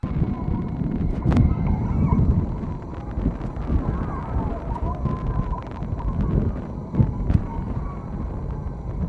Binckhorstlaan, Den haag
White box, with water, contact mics